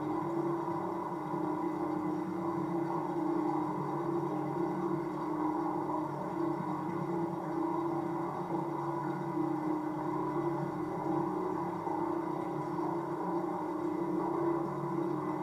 Vance Trail Park, Valley Park, Missouri, USA - Vance Manhole Cover
Recording from two contact mics and a geophone attached to the cover of a manhole in the woods off a spur of the Vance Trail that lead to Pettys Spring.
7 November 2021, ~15:00